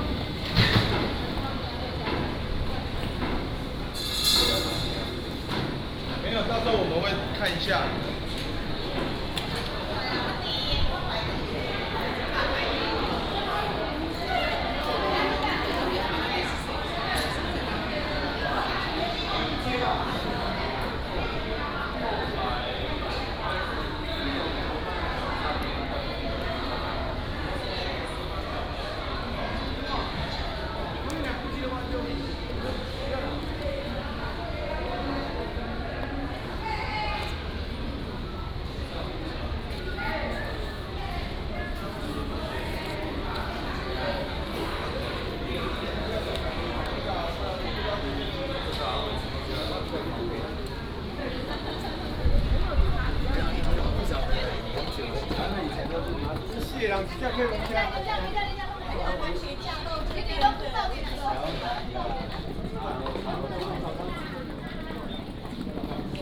Beigan Airport, Taiwan - At the airport
At the airport, Baggage claim area